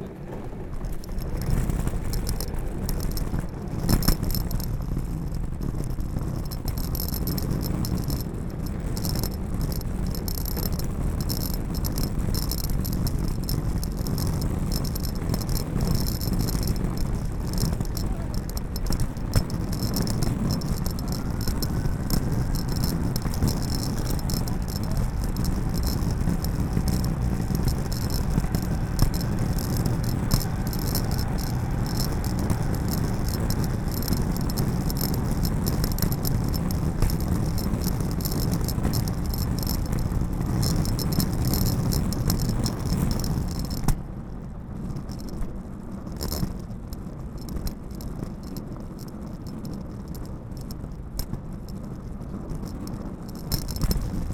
Nelson St, Vancouver, BC, Canada - USA Luggage Bag Drag #13 (Night)
Recorded as part of the 'Put The Needle On The Record' project by Laurence Colbert in 2019.
Metro Vancouver Regional District, British Columbia, Canada, 4 October 2019